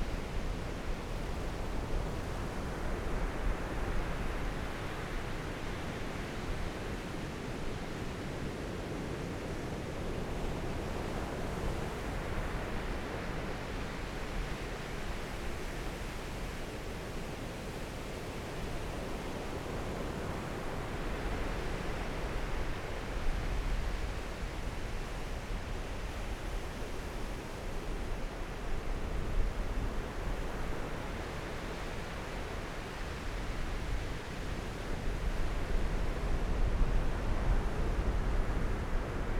Taitung City, Taiwan - the waves
At the beach, Sound of the waves, Zoom H6 M/S, Rode NT4
Taitung County, Taiwan, 15 January 2014, 16:00